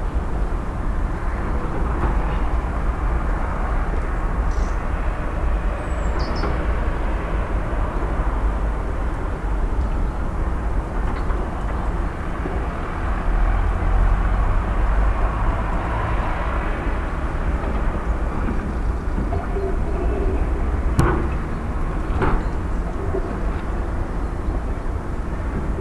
maribor hillside panorama
the sounds of a city under construction reverberate around the valley and are recorded from the top of one of the hills that overlook it
22 November, ~12:00